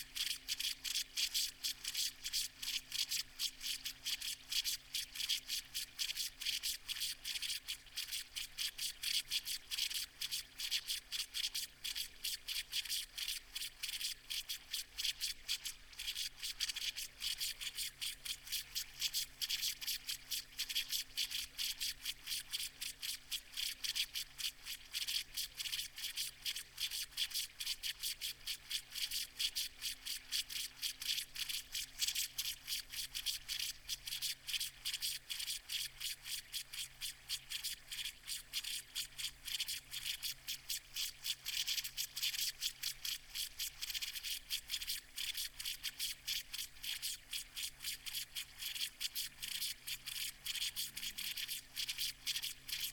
Malton, UK - leaking pipe ...
leaking pipe ... part of an irrigation system ... dpa 4060s in parabolic to mixpre3 ...
22 July, 06:09